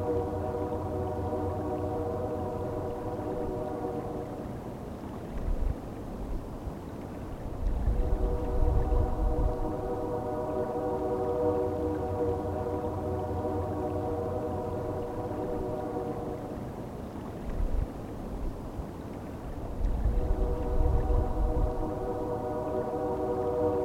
Otsego County Park, County Park Road, Gaylord, MN, USA - Elk River Train
recorded using a Zoom H1
16 February 2013